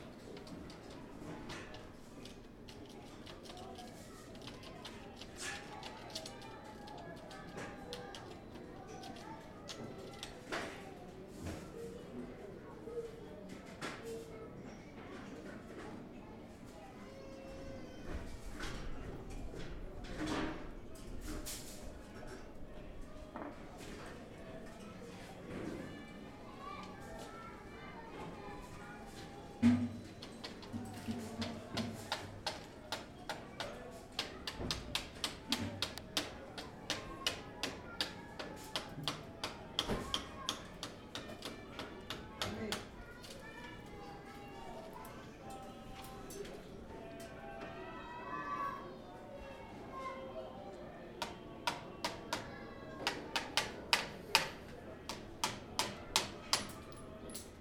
Biet Yisrael, Jerusalem - Children studying in Cheder
A small alley. Sound from window of children studying in Cheder and while glazier is crumbing a broken window above. Uploaded by Josef Sprinzak.
March 2015